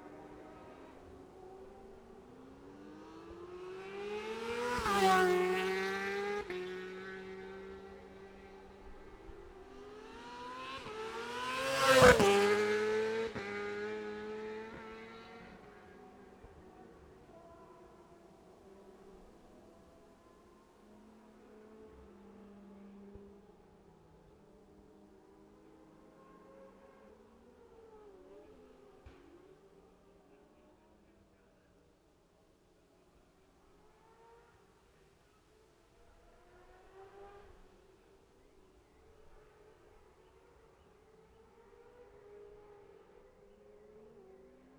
Jacksons Ln, Scarborough, UK - Gold Cup 2020 ...
Gold Cup 2020 ... 600 odds then 600 evens practice ... Memorial Out ... dpa 4060s to Zoom H5 ...